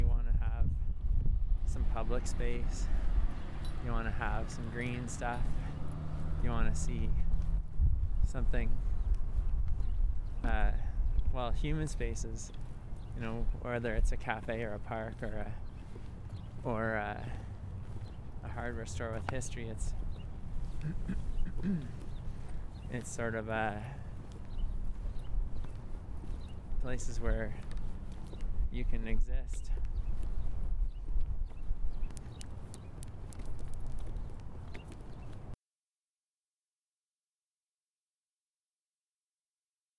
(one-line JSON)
{"title": "East Village, Calgary, AB, Canada - places you can exist", "date": "2012-04-09 13:47:00", "description": "This is my Village\nTomas Jonsson", "latitude": "51.05", "longitude": "-114.05", "altitude": "1040", "timezone": "America/Edmonton"}